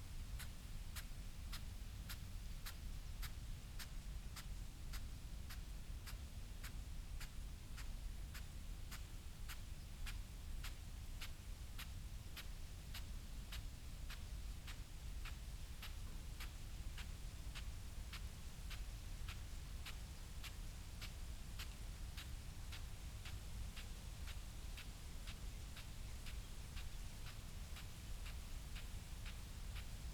Malton, UK - crop irrigation ... potatoes ...
crop irrigation ... potatoes ... dpa 4060s clipped to bag to zoom h5 ... unattended time edited extended recording ... bird calls ... from ... yellow wagtail ... wood pigeon ... pheasant ... wren ...
North Yorkshire, England, United Kingdom